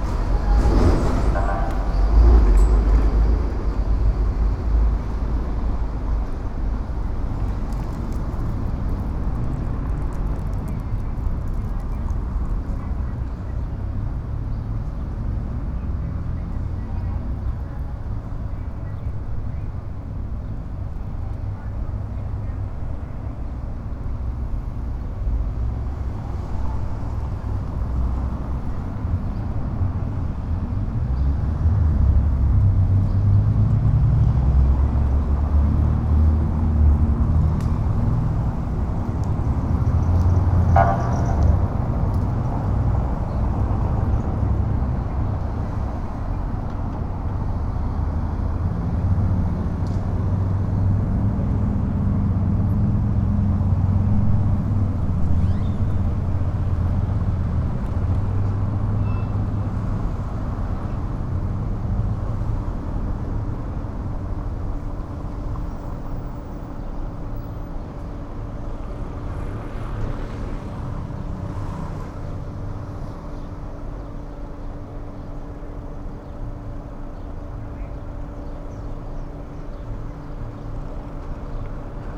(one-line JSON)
{"title": "Ignacio Zaragoza, Centro, León, Gto., Mexico - Plaza Expiatorio durante la cuarentena por COVID-19 en el primer día de la fase 3.", "date": "2020-04-21 14:26:00", "description": "Expiatorio Plaza during the COVID-19 quarantine on the first day of phase 3.\nThis is a plaza where there is normally a lot of flow of people going by, but now there are very few people due to the quarantine that is lived at this time by the pandemic.\n(I stopped to record while going for some medicine.)\nI made this recording on April 21st, 2020, at 2:26 p.m.\nI used a Tascam DR-05X with its built-in microphones and a Tascam WS-11 windshield.\nOriginal Recording:\nType: Stereo\nEsta es una plaza donde normalmente hay mucho flujo de gente pasando, pero ahora hay muy pocas personas debido a la cuarentena que se vive en este tiempo por la pandemia.\n(Me detuve a grabar al ir por unas medicinas.)\nEsta grabación la hice el 21 de abril 2020 a las 14:26 horas.", "latitude": "21.12", "longitude": "-101.68", "altitude": "1803", "timezone": "America/Mexico_City"}